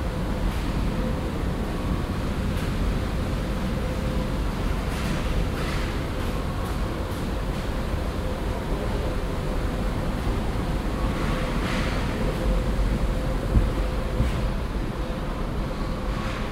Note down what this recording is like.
shopping center in the afternoon, project: : resonanzen - neanderland - social ambiences/ listen to the people - in & outdoor nearfield recordings1